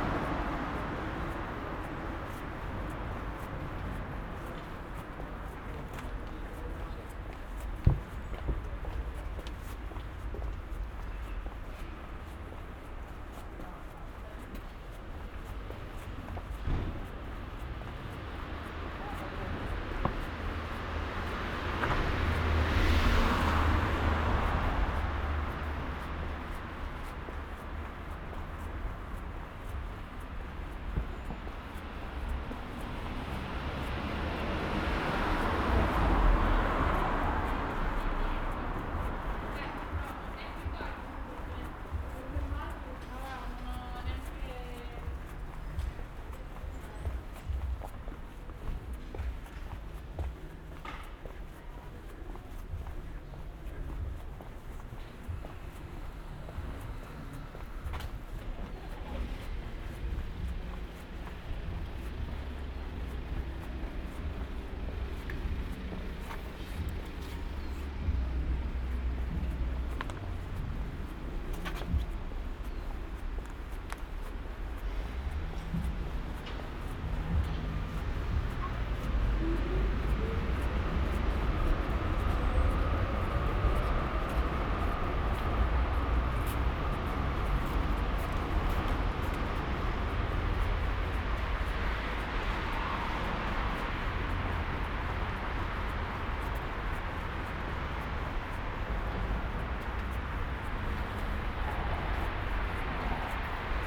Ascolto il tuo cuore, città, I listen to your heart, city. - “Outdoor market on Saturday in the square at the time of covid19”: Soundwalk
“Outdoor market on Saturday in the square at the time of covid19”: Soundwalk
Chapter CXLIII of Ascolto il tuo cuore, città. I listen to your heart, city.
Saturday, November21th 2020. Walking in the outdoor market at Piazza Madama Cristina, district of San Salvario, two weeks of new restrictive disposition due to the epidemic of COVID19.
Start at 3:12 p.m., end at h. 3:42 p.m. duration of recording 30:19”
The entire path is associated with a synchronized GPS track recorded in the (kml, gpx, kmz) files downloadable here: